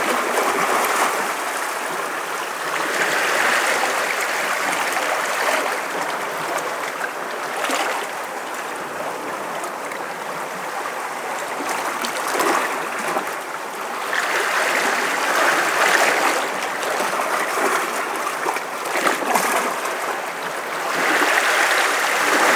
{"title": "tondatei.de: cala llombards", "date": "2010-11-21 13:33:00", "description": "wellen, brandung, meer", "latitude": "39.32", "longitude": "3.14", "altitude": "13", "timezone": "Europe/Madrid"}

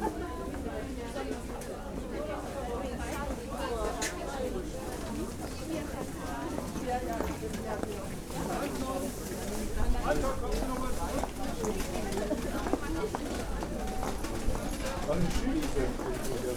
{"title": "berlin, maybachufer: wochenmarkt - the city, the country & me: market day", "date": "2010-11-19 16:02:00", "description": "a walk around the market\nthe city, the country & me: november 19, 2010", "latitude": "52.49", "longitude": "13.42", "altitude": "38", "timezone": "Europe/Berlin"}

